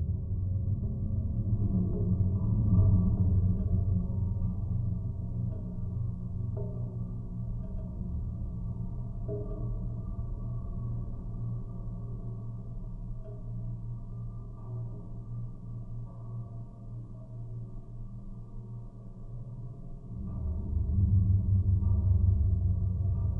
Jūrmala, Latvia, chimneys support wire
contact mics and geophone on chimney's support wire